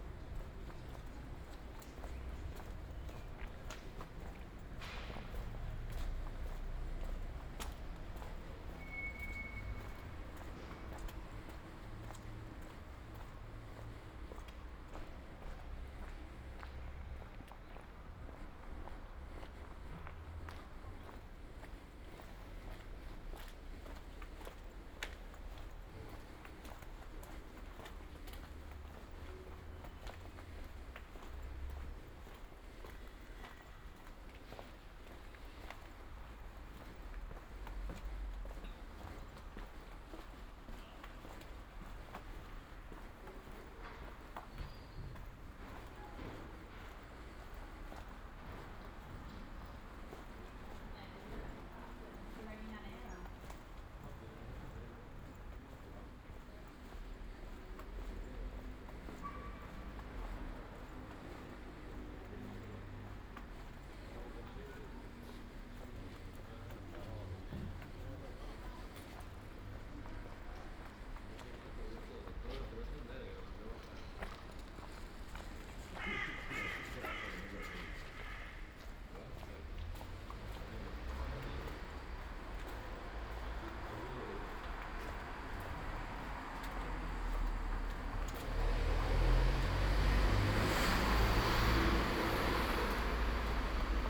Ascolto il tuo cuore, città. I listen to your heart, city. **Several chapters SCROLL DOWN for all recordings ** - Evening walking without rain in the time of COVID19 Soundwalk
"Evening walking without rain in the time of COVID19" Soundwalk
Chapter LII of Ascolto il tuo cuore, città. I listen to your heart, city
Tuesday April 21th 2020. San Salvario district Turin, walking to Corso Vittorio Emanuele II and back, forty two days after emergency disposition due to the epidemic of COVID19.
Start at 7:22 p.m. end at 4:43 p.m. duration of recording 28’00”
The entire path is associated with a synchronized GPS track recorded in the (kmz, kml, gpx) files downloadable here:
Piemonte, Italia, 21 April 2020, ~19:00